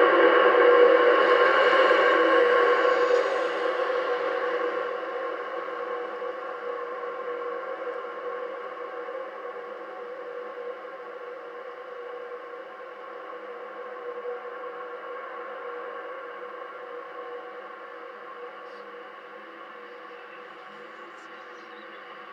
West Loop, Chicago, IL, USA - sign in union park
Two contact mics connected to sign in union park
8 November 2014